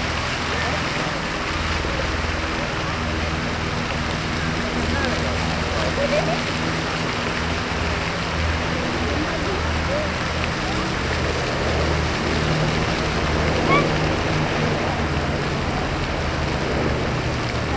Multimedialne Park Fontann (d), Warszawa